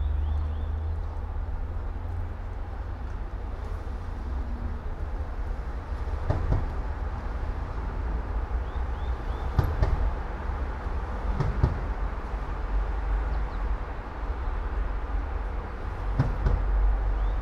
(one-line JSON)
{"title": "all the mornings of the ... - feb 19 2013 tue", "date": "2013-02-19 07:59:00", "latitude": "46.56", "longitude": "15.65", "altitude": "285", "timezone": "Europe/Ljubljana"}